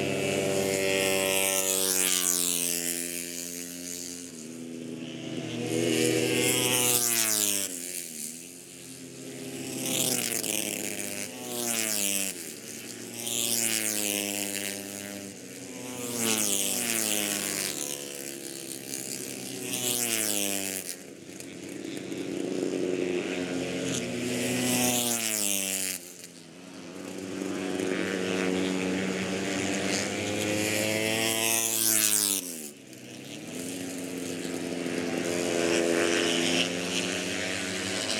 Silverstone Circuit, Towcester, UK - british motorcycle grand prix 2013 ...
moto3 fp1 2013 ...
29 August 2013